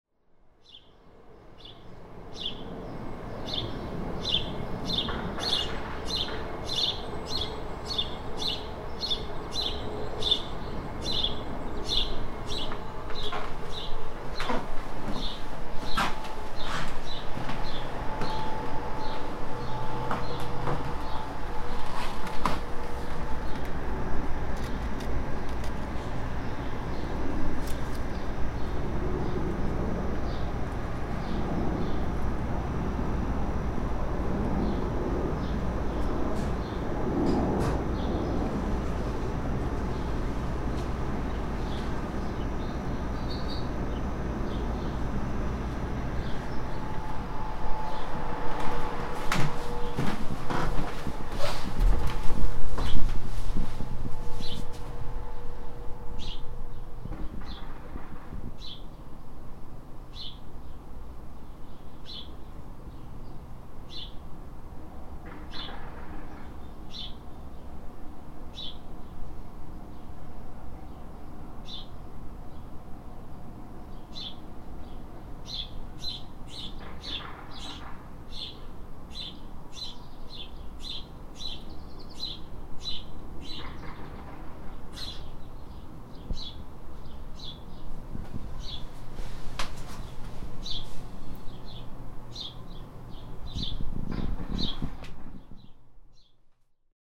{
  "title": "Harriet Ave, Minneapolis, MN, USA - Friday afternoon summer indoor ambience",
  "date": "2021-06-25 13:45:00",
  "description": "Friday afternoon summer ambience. recorded with Tascam DR-100mk3 handheld, built-in mics in kitchen, living room, and bathroom of outdoor sounds flowing in through open windows.",
  "latitude": "44.94",
  "longitude": "-93.29",
  "altitude": "266",
  "timezone": "America/Chicago"
}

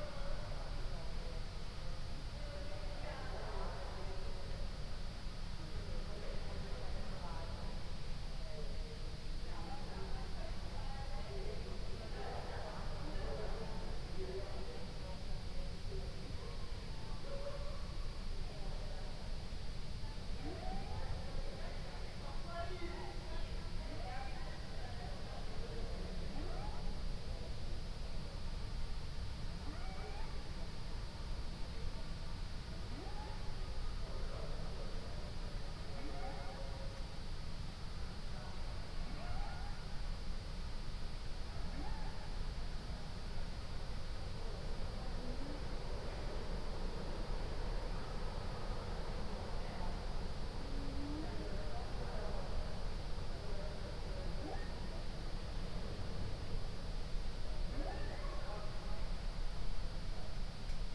{
  "title": "Ascolto il tuo cuore, città. I listen to your heart, city. Several chapters **SCROLL DOWN FOR ALL RECORDINGS** - Round midnight song of the whales in the background in the time of COVID19: soundscape.",
  "date": "2020-11-27 23:51:00",
  "description": "\"Round midnight song of the whales in the background in the time of COVID19\": soundscape.\nChapter CXLIV of Ascolto il tuo cuore, città. I listen to your heart, city\nWednesday November 11th 2020. Fixed position on an internal terrace at San Salvario district Turin, almost three weeks of new restrictive disposition due to the epidemic of COVID19.\nOn the terrace I diffused the CD: “Relax with Song of the whales”\nStart at 11:51 p.m. end at 00:13 a.m. duration of recording 22’29”",
  "latitude": "45.06",
  "longitude": "7.69",
  "altitude": "245",
  "timezone": "Europe/Rome"
}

